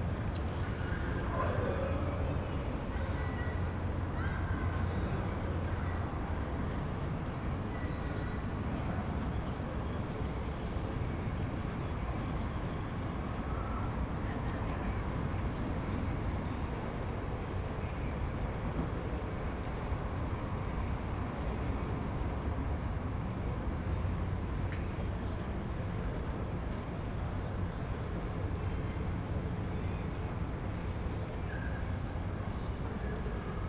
Bruno-Apitz-Straße, Berlin Buch - appartement building block, night ambience
night ambience within Plattenbau building block, voices, someone's whisteling
(SD702, Audio Technica BP4025)